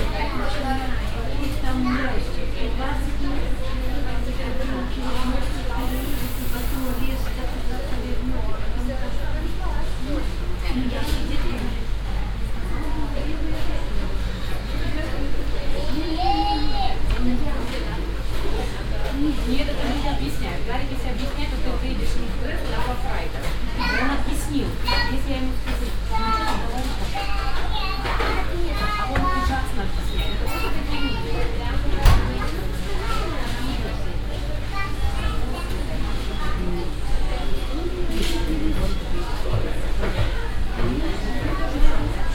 dresden, prager str, russian women in an american fast food restaurant
a group of russian women meeting and talking after shopping in an american fast food restaurant
soundmap d: social ambiences/ listen to the people - in & outdoor nearfield recordings
17 June, 12:17